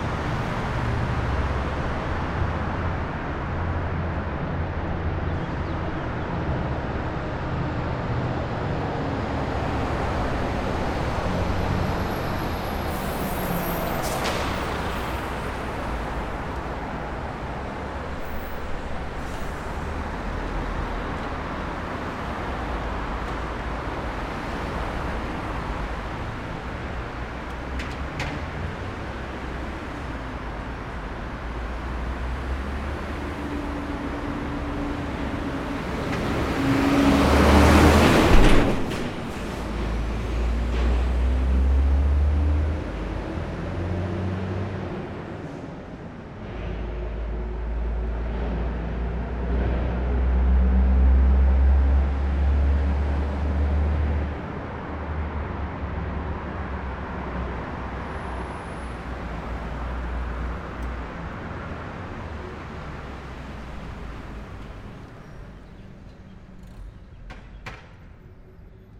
Perugia, Italia - the mouth of the Kennedy tunnel
same spot of the previous recording the day after with different mics
Perugia, Italy, 22 May 2014, ~15:00